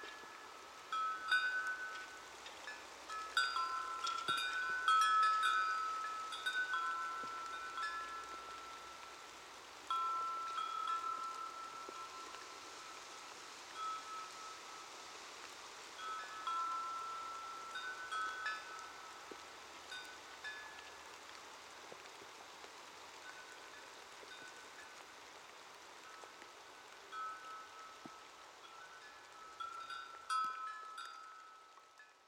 Aglona, Latvia, chimes on Christs Mount
The chimes on The King's Hill of Christ, near Aglona, Latvia
Latgale, Latvija, 30 July 2020